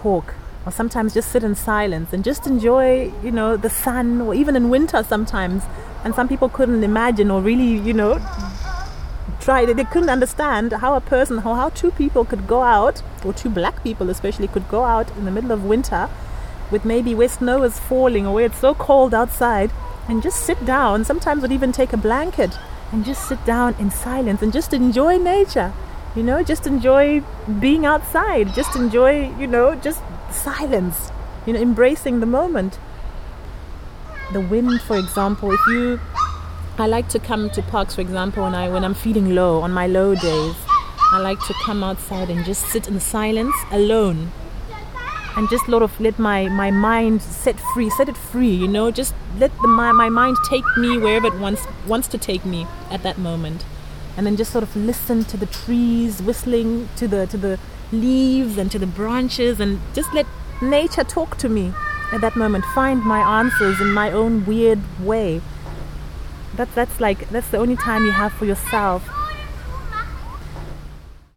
Hallohpark, Bockum-Hövel, Hamm, Germany - Yvonne's love song to parks...
We are with Yvonne Chipo Makopa and her little son Connor in the “Hallohpark” in Bockum-Hovel; the area where the park raises up steeply. The wind blows strongly through the old trees around the playground. Yvonne is a busy young woman, commuting every day to a neighbouring town for work and study, is married and has a four-year-old son and, still finds time getting into a lot of extra work as the Secretary of the local African club “Yes Afrika”. Yvonne is originally from Zimbabwe and came to Germany years back on a scholarship as an exchange student. Hear her “love song” to parks, and to her neighbourhood park in particular…
for Yes Afrika e.V. see:
11 September 2014